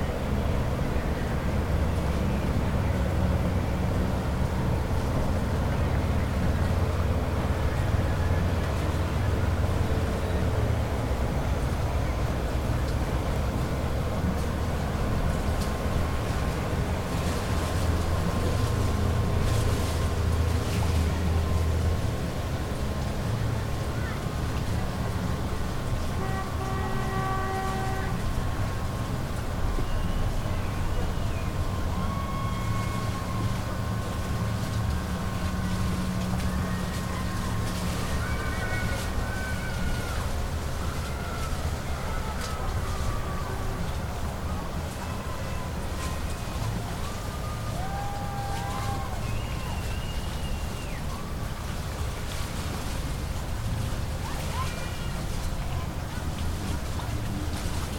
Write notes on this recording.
On the north shore of Bear Lake. The annual boat parade during Bear Lake Days festival. About a dozen boats pass, some with music and cheering. A few birch catkins fall nearby. The wake of the boats eventually hits the lakeshore. Stereo mic (Audio-Technica, AT-822), recorded via Sony MD (MZ-NF810).